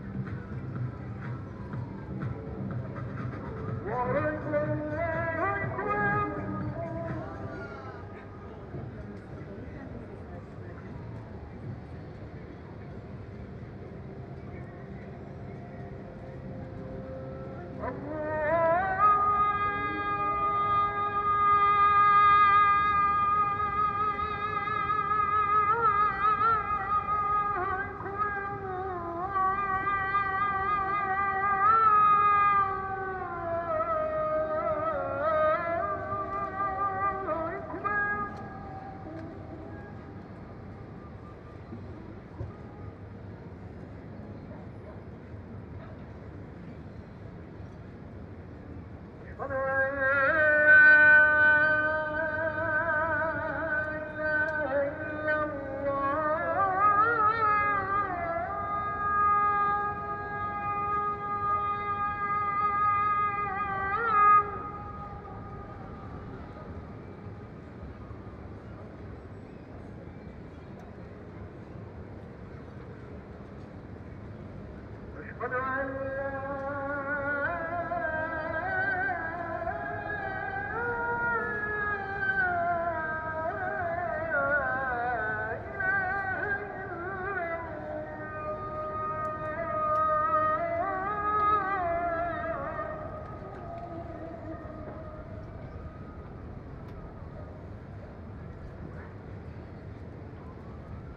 Marina Kalkan, Turkey - 915b Muezzin call to prayer (late evening)
Recording of a late evening call to prayer.
AB stereo recording (17cm) made with Sennheiser MKH 8020 on Sound Devices MixPre-6 II.
Antalya, Akdeniz Bölgesi, Türkiye, 21 September